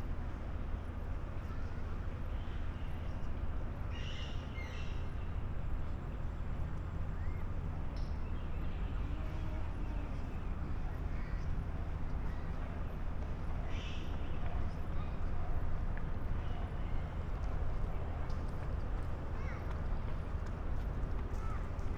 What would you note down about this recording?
Köln, Mülheim, pedestrian bridge at the harbour, parakeets (Psittacula krameri) in the trees, quite common in Cologne. Pedestrians, bikers, a ship passing by, (Sony PCM D50, Primo EM172)